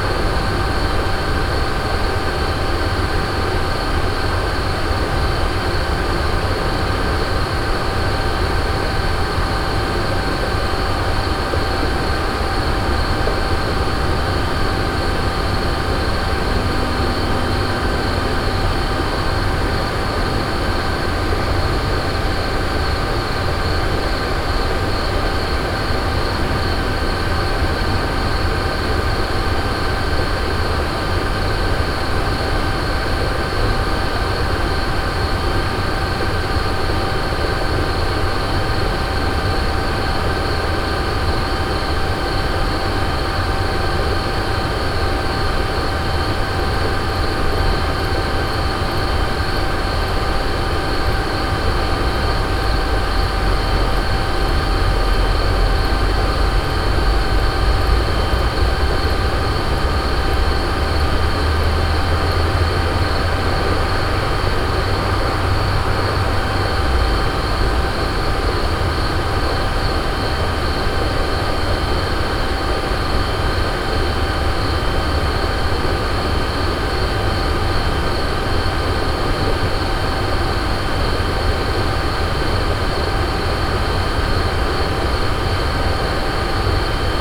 Moulins, Allee des soupirs, WWTP by night 1
France, Auvergne, WWTP, night, insects, binaural
Moulins, France, May 2011